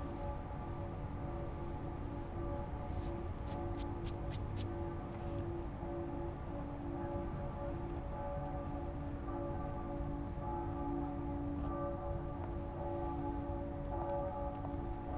[H4n Pro] Cathedral bells on the opposite river bank. Towards the end waves crashing on the shore, caused by the river bus.
Antwerpen, Belgium